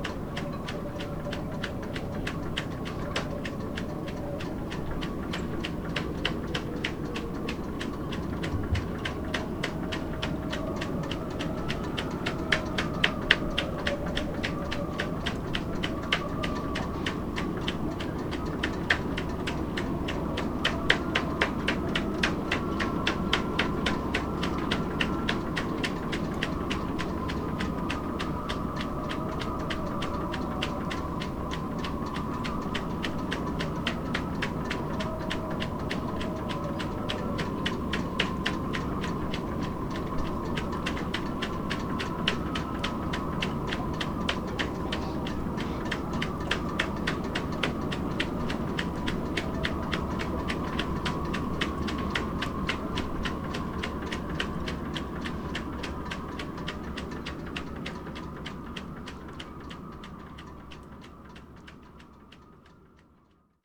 wind blows through sailboat masts and riggings
the city, the country & me: june 21, 2011